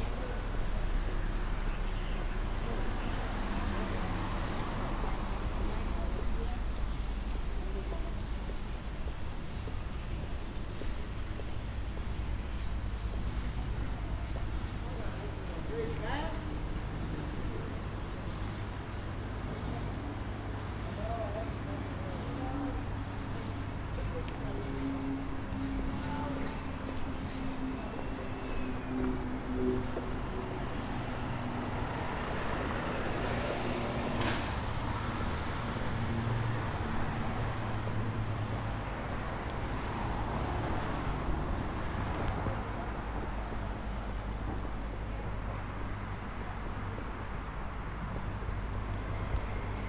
Lively corner of Raglan and Cope St, recorded with Zoom pro mic
New South Wales, Australia, 10 July, 22:20